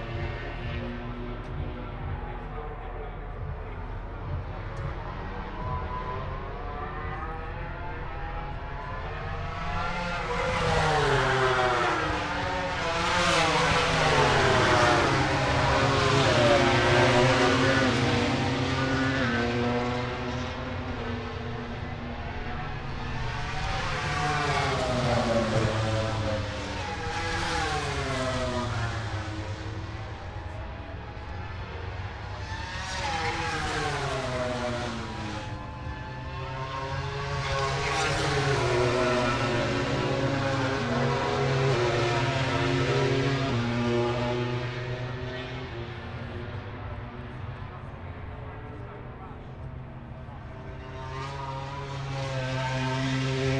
Silverstone Circuit, Towcester, UK - british motorcycle grand prix 2022 ... moto grandprix ... ...
british motorcycle grand prix 2022 ... moto grand prix free practice two ... inside maggotts ... dpa 4060s clipped to bag to zoom h5 ...